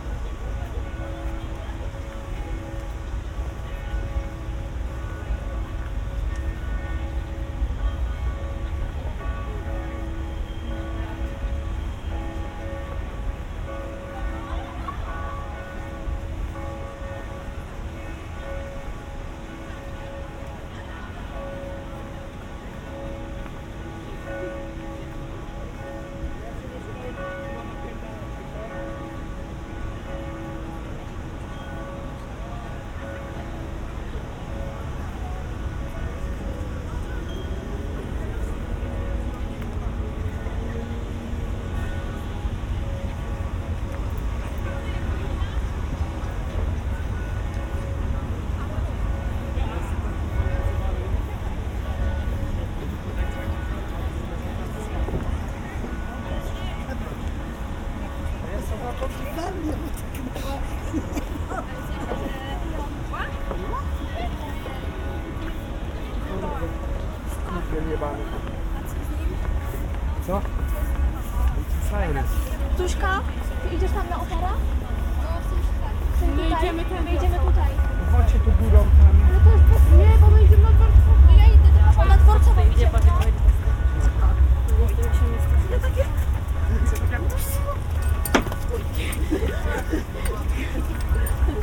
Wyspa Młyńska, Bydgoszcz, Poland - (837a BI) Soundwalk in the evening
A Sunday evening soundwalk through the island: some fountain sounds, teenagers partying etc...
Recorded with Sennheiser Ambeo binaural headset on an Iphone.
województwo kujawsko-pomorskie, Polska